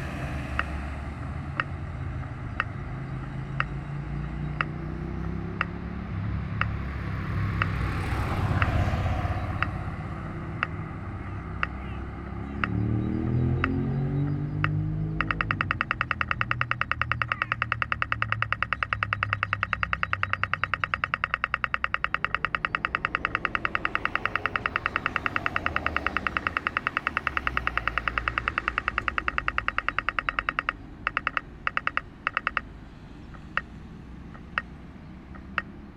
Kijkduin, Laan van Meerdervoort, red light on a huge boulevard.
Den Haag, Netherlands